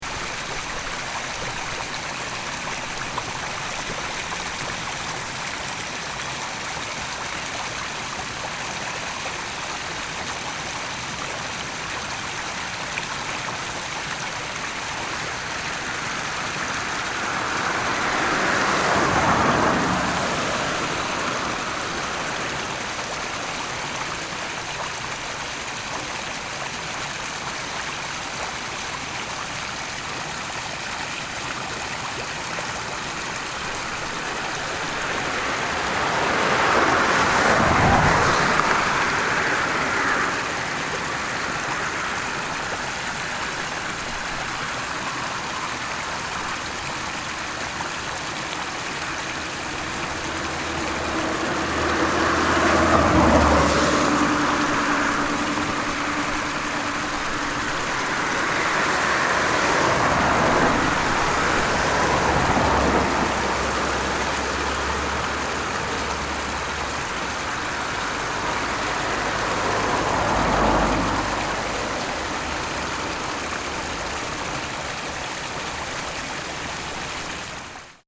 brücke/fangstrasse - brücke/fangstrasse, hamm (westf)
brücke/fangstrasse, hamm (westf)